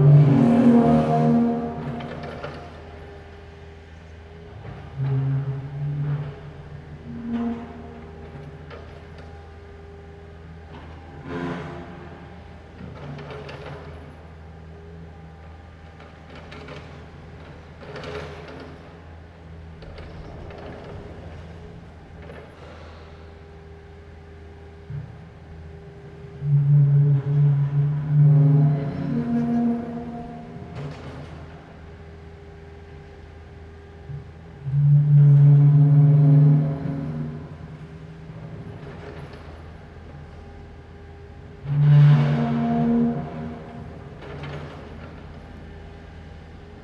Rue de Laeken, Brussel, Belgium - Deconstruction site and bells
Chantier, cloches à midi.
Tech Note : Sony PCM-D100 wide position from a window at the 2nd floor.
19 October 2021, ~12:00